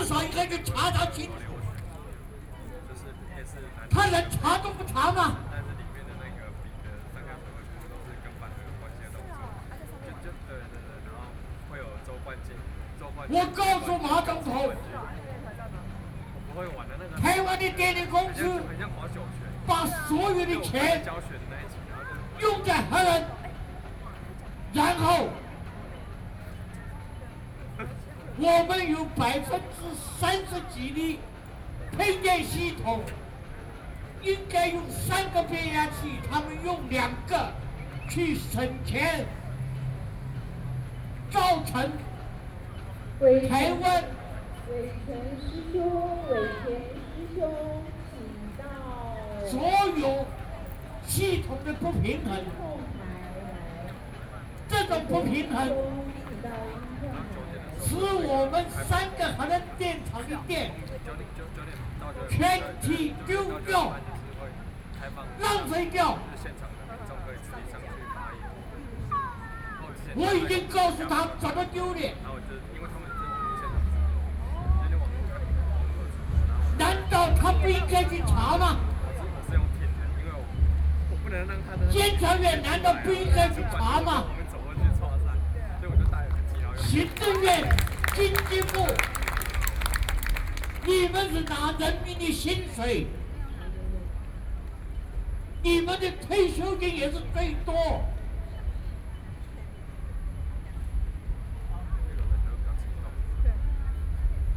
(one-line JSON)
{"title": "Liberty Square, Taipei - No Nuke", "date": "2013-05-24 20:29:00", "description": "Antinuclear Civic Forum, Energy experts are well-known speech, Sony PCM D50 + Soundman OKM II", "latitude": "25.04", "longitude": "121.52", "altitude": "8", "timezone": "Asia/Taipei"}